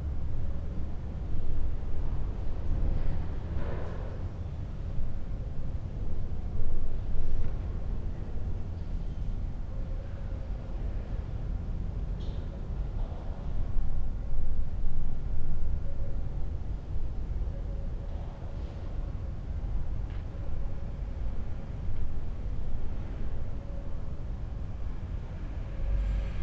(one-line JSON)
{"title": "inner yard window, Piazza Cornelia Romana, Trieste, Italy - creaky window", "date": "2013-09-06 19:00:00", "description": "friday evening, sea gulls, flies ...", "latitude": "45.65", "longitude": "13.77", "altitude": "24", "timezone": "Europe/Rome"}